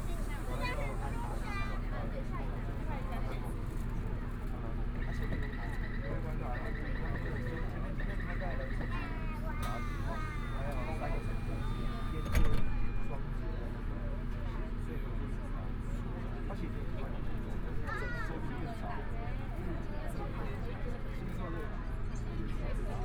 from Shuanglian station to Chiang Kai-shek Memorial Hall station, Binaural recordings, Zoom H4n+ Soundman OKM II